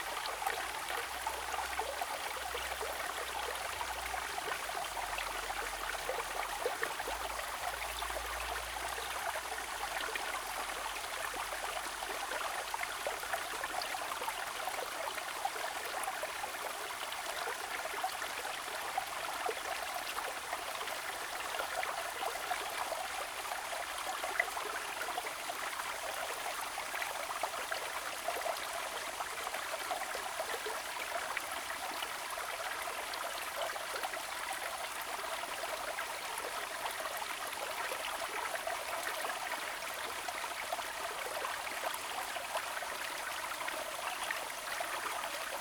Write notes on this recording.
The sound of the stream, Zoom H2n MS+XY +Spatial audio